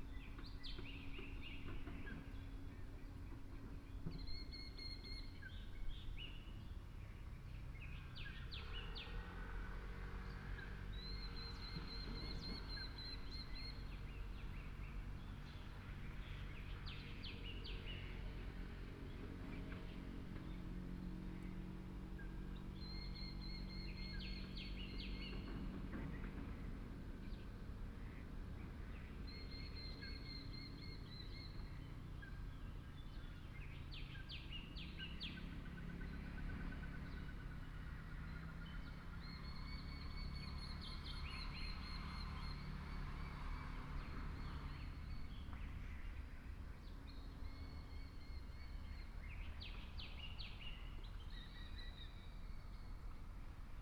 {
  "title": "草湳溼地, 桃米里 Puli Township - in the wetlands",
  "date": "2016-03-27 09:20:00",
  "description": "in the wetlands, Bird sounds, Construction Sound",
  "latitude": "23.95",
  "longitude": "120.91",
  "altitude": "584",
  "timezone": "Asia/Taipei"
}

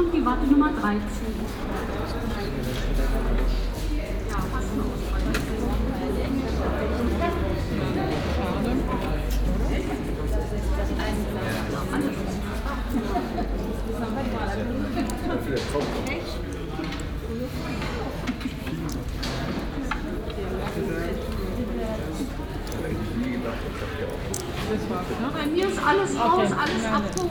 {
  "title": "Charlottenburg, Berlin, Deutschland - wartenummer acht",
  "date": "2016-04-17 19:58:00",
  "latitude": "52.50",
  "longitude": "13.30",
  "altitude": "40",
  "timezone": "Europe/Berlin"
}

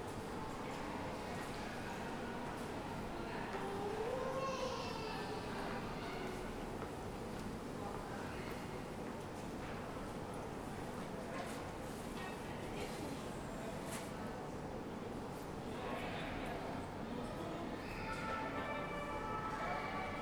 {
  "title": "Rue Gabriel Péri, Saint-Denis, France - Galerie Marchande Rosalie",
  "date": "2019-05-27 11:30:00",
  "description": "This recording is one of a series of recording mapping the changing soundscape of Saint-Denis (Recorded with the internal microphones of a Tascam DR-40).",
  "latitude": "48.94",
  "longitude": "2.36",
  "altitude": "33",
  "timezone": "Europe/Paris"
}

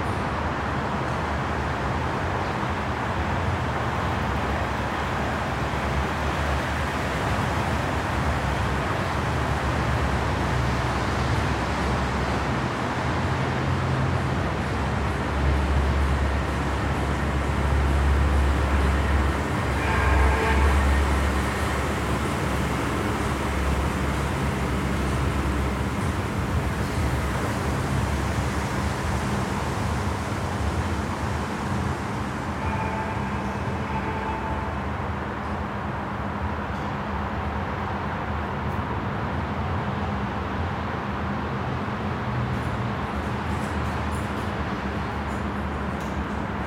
{
  "title": "Rathauspassage parking garage lost corner",
  "date": "2010-02-02 12:47:00",
  "description": "resonances recorded in an empty corner of the parking garage, Aporee workshop",
  "latitude": "52.52",
  "longitude": "13.41",
  "altitude": "41",
  "timezone": "Europe/Tallinn"
}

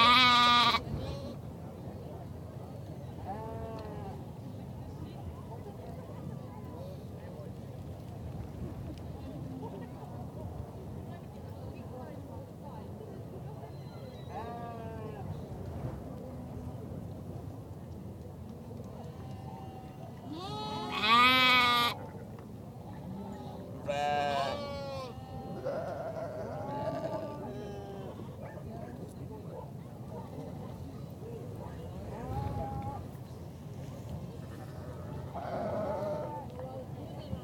{
  "title": "Voe & District Agricultural Show, Shetland Islands, UK - Very loud lamb",
  "date": "2013-08-03 13:07:00",
  "description": "This is a recording of an individual lamb at the Voe Show in Shetland. There was a row of pens with Shetland sheep in them, and at the end of the row, a pen holding a pair of lambs. One of these young lambs was rather boisterous and had a lot to say for itself! I popped my little EDIROL R-09 down on the grass near the pen, hoping that it would catch less of the wind in this position, and left it for a while so that it could record the noisy lamb making its characterful bleats.",
  "latitude": "60.36",
  "longitude": "-1.26",
  "altitude": "78",
  "timezone": "Europe/London"
}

